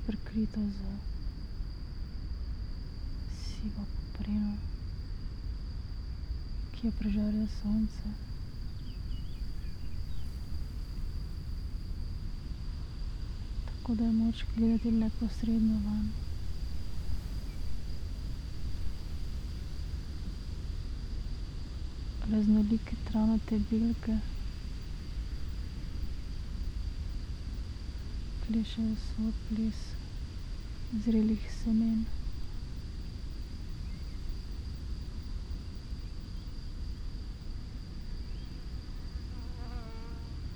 meadow poems, Piramida, Slovenia - red
meadow, spoken words, grass ears, wind, crickets, traffic noise from afar